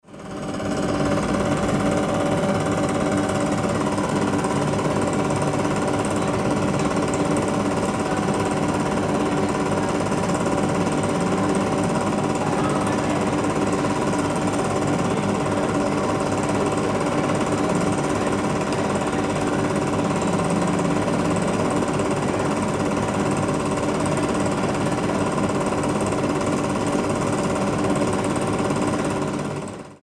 06.12.2008 20:00, soundtrack of a movie by anna barham, at the free radicals exhibition. the gallerist showed me this great movie, it was not part of the show.